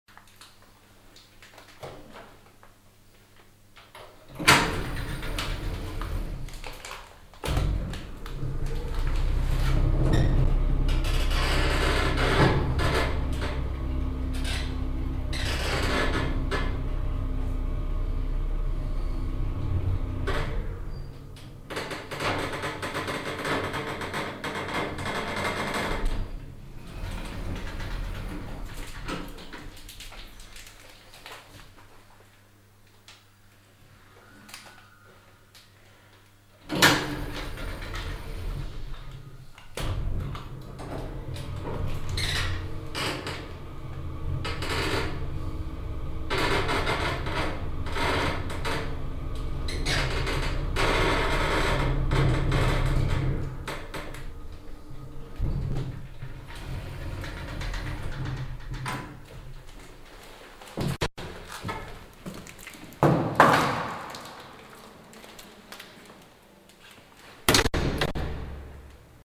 cologne, neusserstr, backyard building, elevator
soundmap nrw: social ambiences/ listen to the people - in & outdoor nearfield recordings